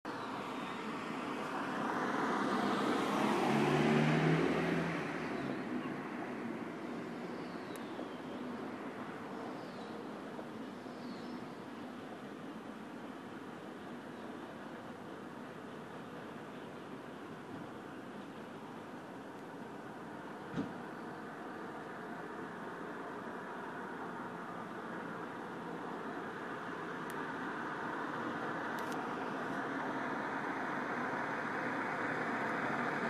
Via del Commercio, 36
Entrance of Ital Gas